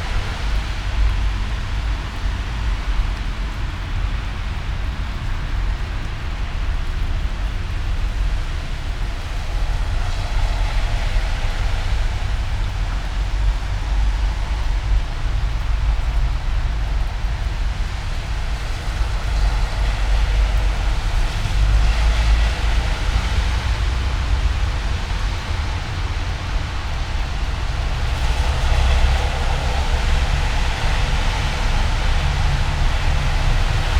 all the mornings of the ... - aug 26 2013 monday 07:18
Maribor, Slovenia